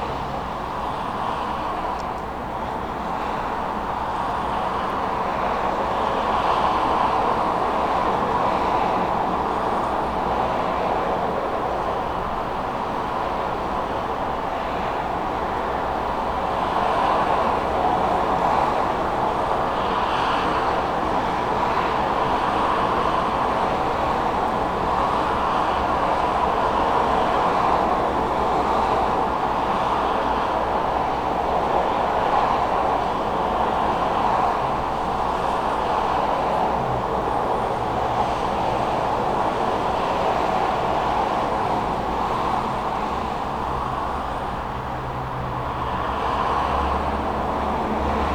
{
  "title": "Goss - Grove, Boulder, CO, USA - Bedroom Window",
  "date": "2013-02-06 18:00:00",
  "latitude": "40.02",
  "longitude": "-105.27",
  "altitude": "1624",
  "timezone": "America/Denver"
}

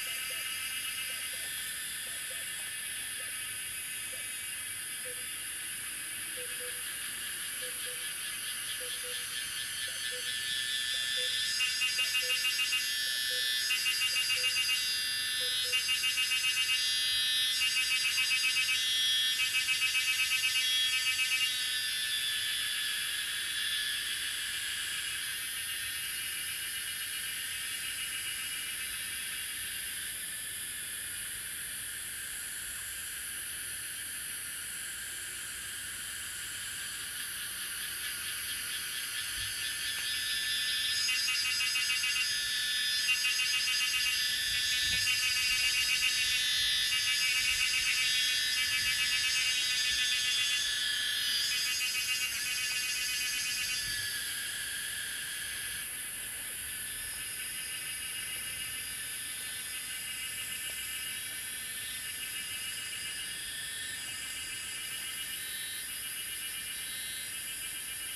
{
  "title": "水上巷桃米里, Puli Township, Nantou County - Faced woods",
  "date": "2016-05-19 13:47:00",
  "description": "Faced woods, Birds called, Cicadas called\nZoom H2n MS+XY",
  "latitude": "23.93",
  "longitude": "120.91",
  "altitude": "729",
  "timezone": "Asia/Taipei"
}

